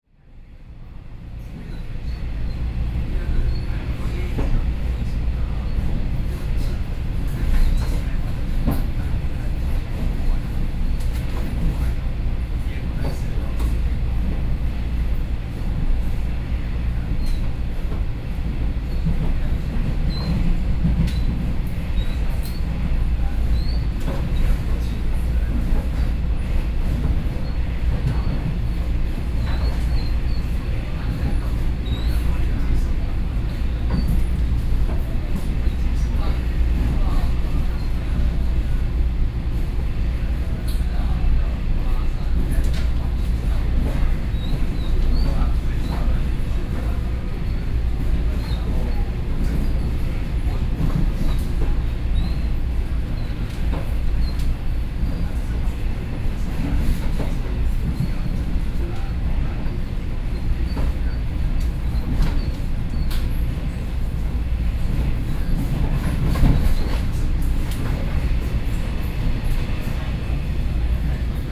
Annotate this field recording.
Inside the train, Ordinary EMU, Sony PCM D50 + Soundman OKM II